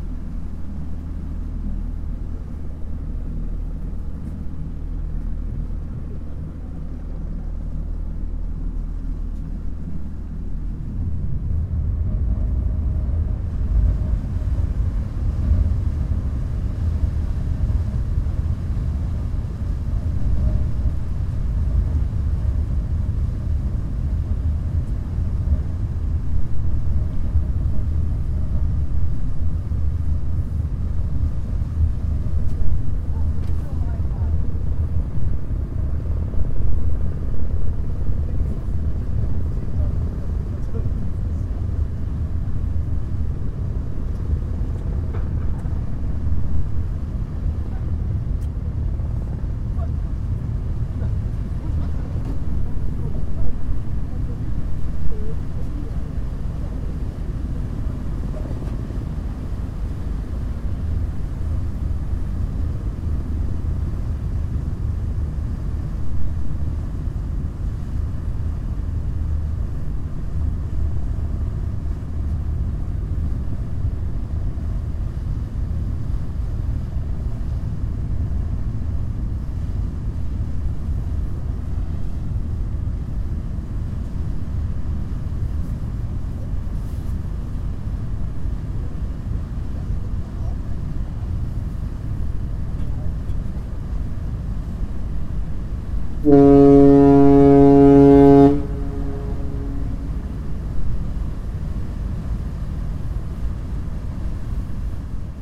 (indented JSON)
{
  "title": "helgoland südhafen - funny girl läuft aus",
  "date": "2014-02-11 16:10:00",
  "description": "funny girl läuft aus dem helgoländer südhafen aus",
  "latitude": "54.17",
  "longitude": "7.90",
  "timezone": "Europe/Berlin"
}